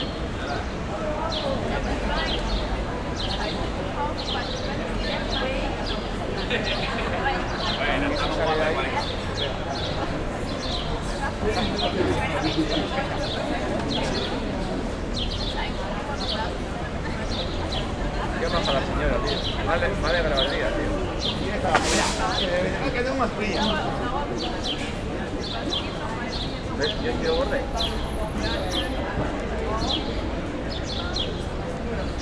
Easo Plaza, Donostia, Gipuzkoa, Espagne - Easo Plaza
Easo Plaza
Captation : ZOOM H6
Gipuzkoa, Euskadi, España, 28 May 2022, 11:00